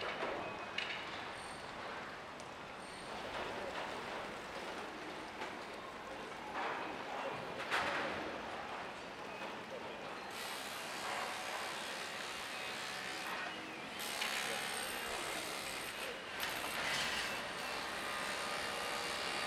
L'Aquila, Piazza Duomo - 2017-05-22 11-Piazza Duomo
L'Aquila AQ, Italy, May 2017